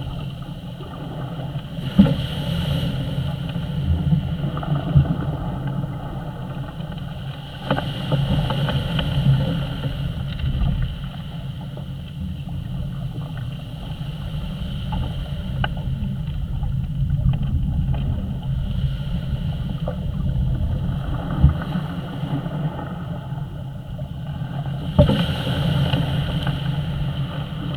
A bolt in a breakwater, Southwold, Suffolk, UK - Bolt
Recorded with a cheap piezo contact mic held against a bolt in a long wooden breakwater.
MixPre 3 with a chinese contact mic costing £2.00